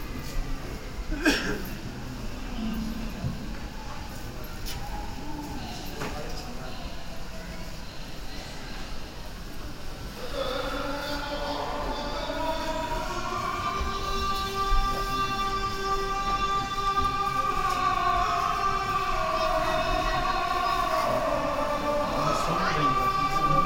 Istanbul, Eyüp - Eyüp Sultan Camii - Believers entering Eyüp Sultan Camii
Shoes in plactic bags are placed in shelves while rustling.
Istanbul/Istanbul Province, Turkey, 17 August 2009